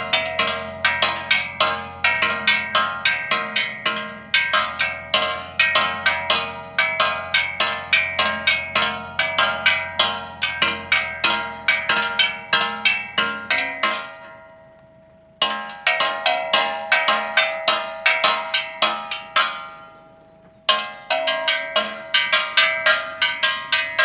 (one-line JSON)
{"title": "Playa Ancha - Gas Seller Percussion", "date": "2015-12-01 12:00:00", "description": "Gas Seller are doing percussion at the back of the truck to announce he is passing by.\nRecorded by a MS Schoeps CCM41+CCM8", "latitude": "-33.03", "longitude": "-71.64", "altitude": "150", "timezone": "America/Santiago"}